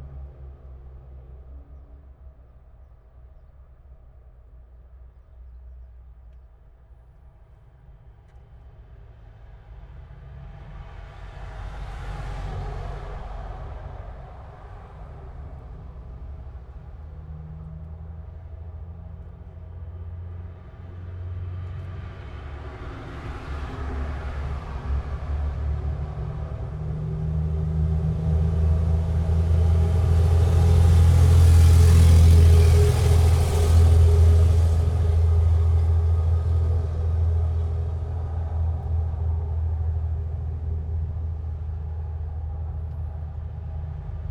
Baħar iċ-Ċagħaq, In-Naxxar, Malta - traffic in manhole
landfill site, traffic heard in a manhole. the air is full of bad smell. waiting for the bus to escape.
(SD702, DPA4060)